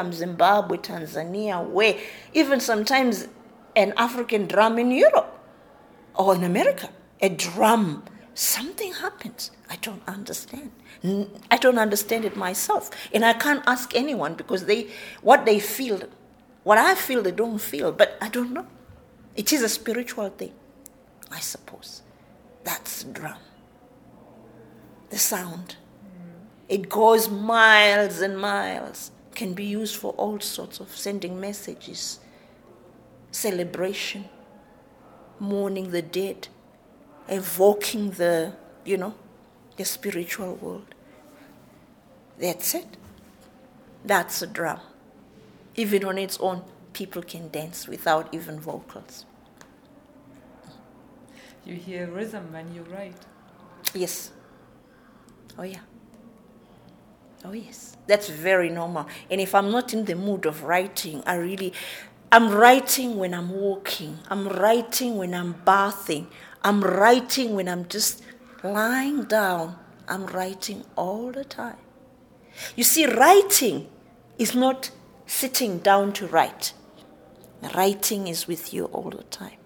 Library of National Gallery, Harare, Zimbabwe - Virginia Phiri talks women’s struggle and creativity…
We are with the writer Virgina Phiri in the open meeting room adjacent to the library of the National Gallery. It’s a bit “echo-y” in here; but the amplified park preachers from Harare Gardens drove as inside. What you are listening to are the final 10 minutes of a long conversation around Virginia’s life as a woman writer in Zimbabwe. Virginia talks about the struggles that women have to go through and encourages her sistaz in the arts to stand strong in their creative production; “We have always done that!.... traditionally women were allowed to do it…!”.
Find the complete interview with Virginia Phiri here: